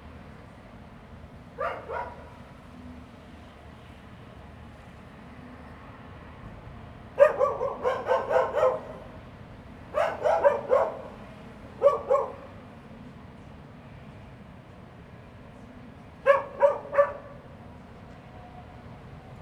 天空格子, Magong City - Dogs barking
In Hostel, Dogs barking
Zoom H2n MS+XY
Magong City, Penghu County, Taiwan, 22 October 2014, ~19:00